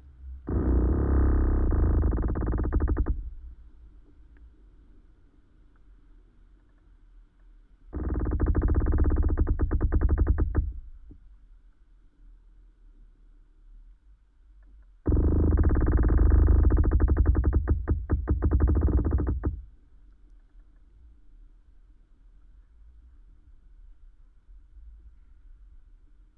Utena, Lithuania, sounding pine tree
windy day. pine trees swaying and touching each other. contact microphone recording